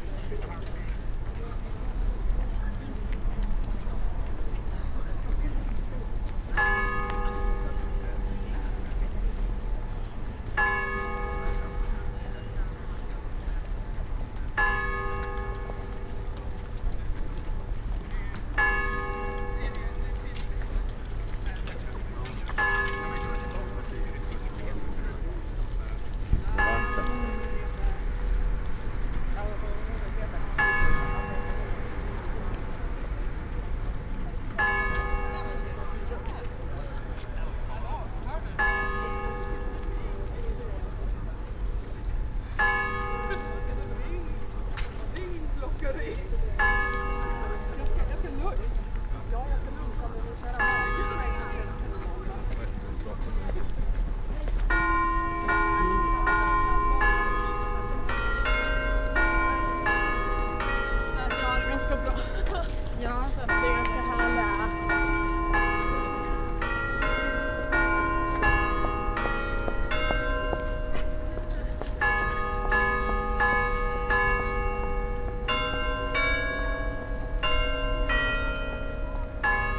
Town Hall. Umeå. Bells and Glashuset
12pm Town Hall bells followed by live event in the Glashuset situated in the main square.